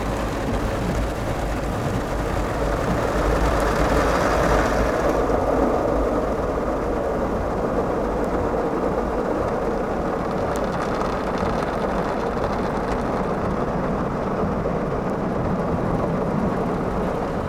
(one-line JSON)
{"title": "Washing Car Service", "date": "2011-03-08 13:50:00", "description": "Inside a car being washed! Applied limiter.", "latitude": "41.40", "longitude": "2.13", "altitude": "129", "timezone": "Europe/Madrid"}